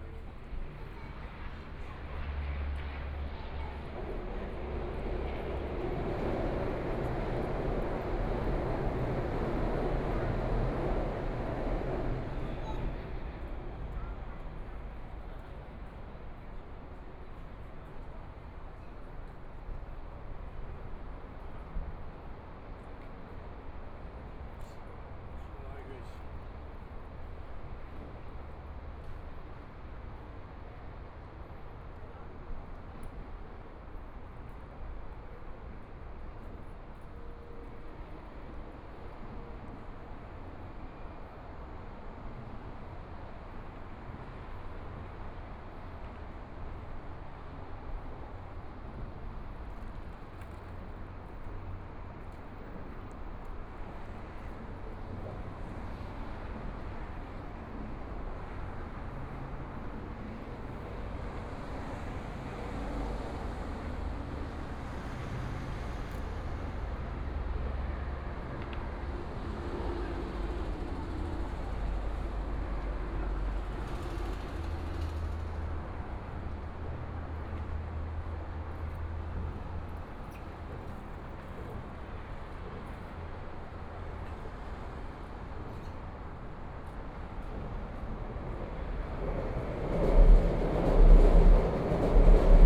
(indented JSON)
{
  "title": "大同區重慶里, Taipei City - Traffic Sound",
  "date": "2014-02-16 16:05:00",
  "description": "Holiday, Standing beneath the MRT tracks, Sunny mild weather, Traffic Sound, Aircraft flying through, MRT train sounds, Sound from highway\nBinaural recordings, ( Proposal to turn up the volume )\nZoom H4n+ Soundman OKM II",
  "latitude": "25.08",
  "longitude": "121.52",
  "timezone": "Asia/Taipei"
}